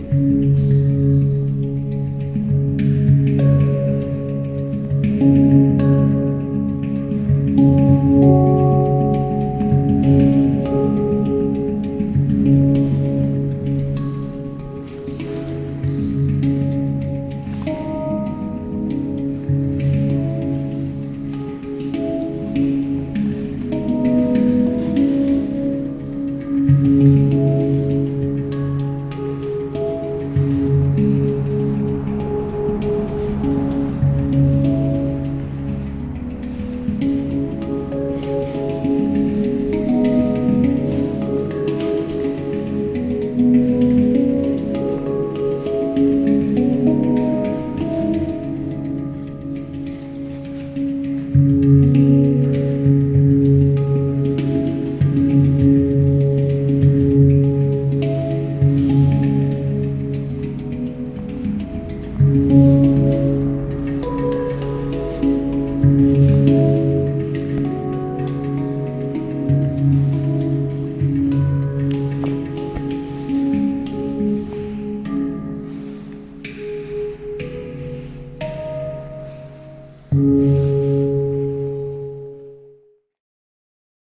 Býčí skála (a cave), Czech Republic - Handpan improvisation
The cave is rarely available for visitors as rare species of animals are living there.
Binaural recording using Soundman OKM II Classic microphones, OKM preamp and Sony DAT recorder.
1 November, 18:30